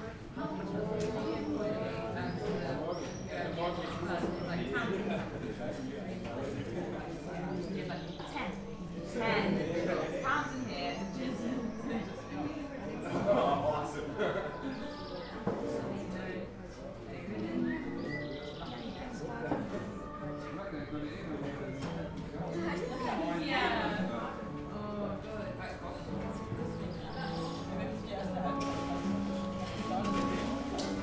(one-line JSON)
{"title": "berlin, mengerzeile: vor kunsthalle - the country & me: in front of kunsthalle m3", "date": "2010-07-03 21:20:00", "description": "too hot summer evening, people sitting in the garden during the opening of lia vaz saleiro´s exhibition \"dawn\"\nthe city, the country & me: july 3, 2010", "latitude": "52.49", "longitude": "13.44", "altitude": "37", "timezone": "Europe/Berlin"}